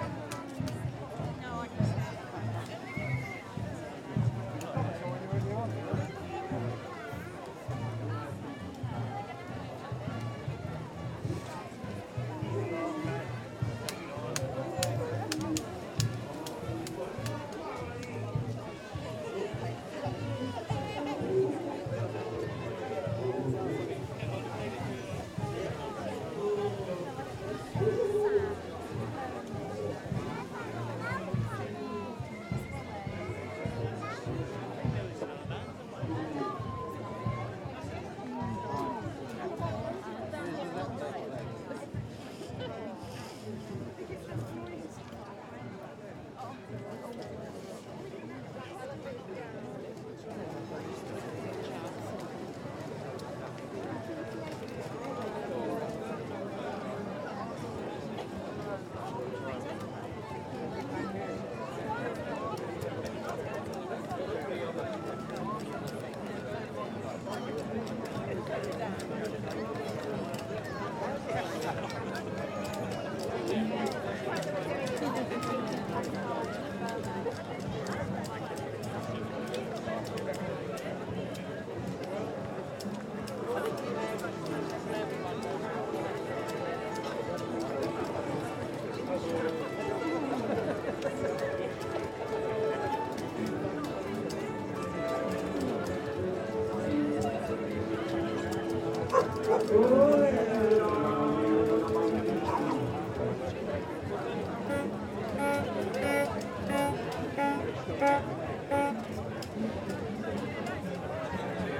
tolpuddle festival, pva mediaLab
soundscape, processions, field recording
Dorset, UK